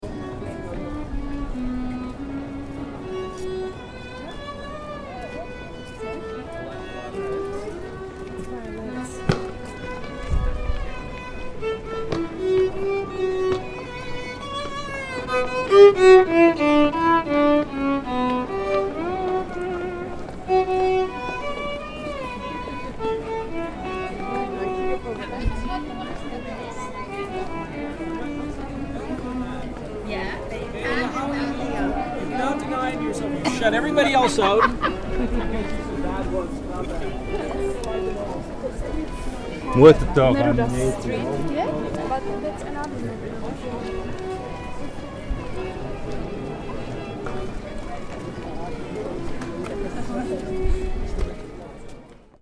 Ke hradu, street musician
old man playing violin for turists
Prague, Czech Republic, June 2011